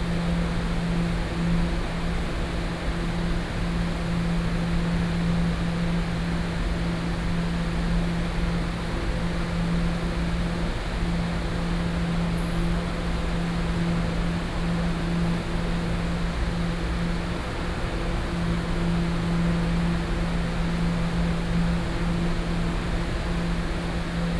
Taipei Main Station, Taiwan - Railway platforms
Railway platforms, Train traveling through, Sony PCM D50 + Soundman OKM II